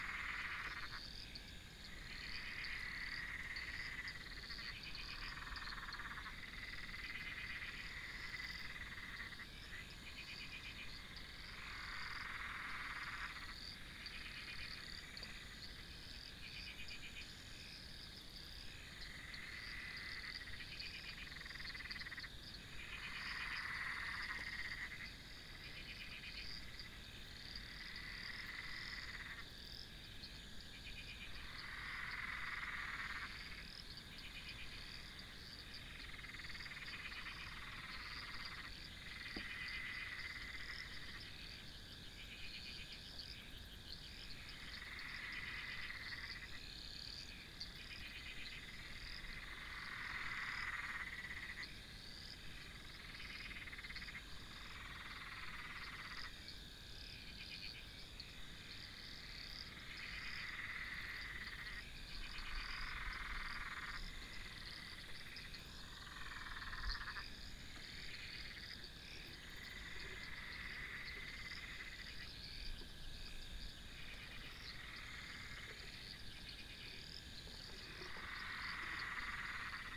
Frogs and cicadas orchestra at mid night. Recorded with Zoom H1 stereo recorder.
Coomba Park NSW, Australia